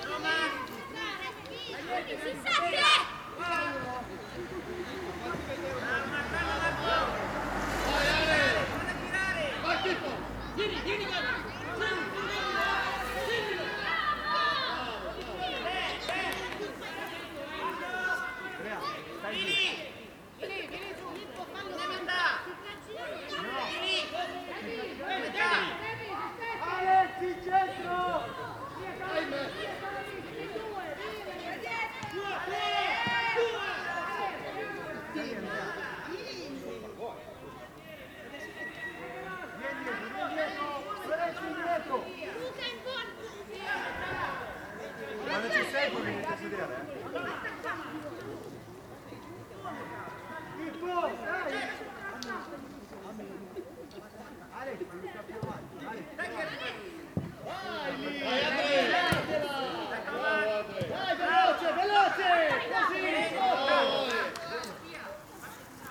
Different perspectives: II C - Torre D'arese, Italy - life in the village - II - perspective C
Just few meters away from (lazy) perspective IIB, kids training football (active), no sounds coming from the other prespectives, although very close